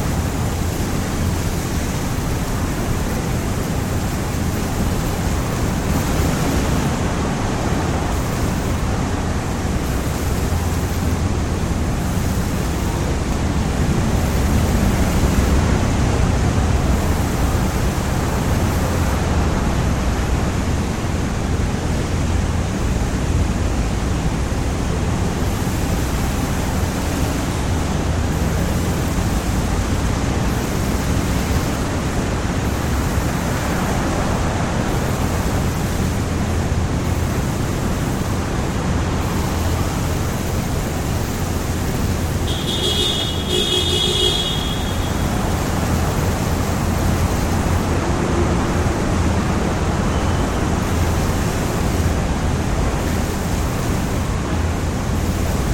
Hong-Kong::WanChai noisy neon by night, 2007
广东, 中华人民共和国/China